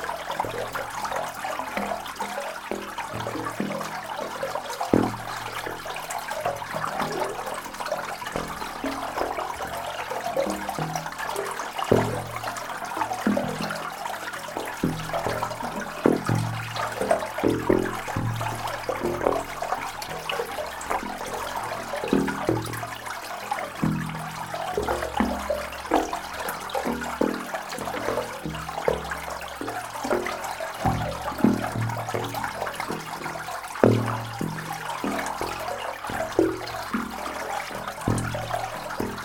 Differdange, Luxembourg - Singing pipe
This is one of the many singing pipe you can find in the abandoned iron mines. On this evening, water level was very high and I made a big dam, in aim to make the pipe sings. Without the dam, it was flooded. This is definitely not the best singing pipe, but this is a rare one where air is good and where I can stay more than 2 minutes. On the other places I know, air is extremely bad (and dangerous). That's why I made a break here, recording my loved pipe, seated on the cold iron ground. Could you think that exactly now, when you're hearing this sound, the pipe is still singing probably a completely different song, because of a constantly changing rain ? I often think about it. How is the song today ?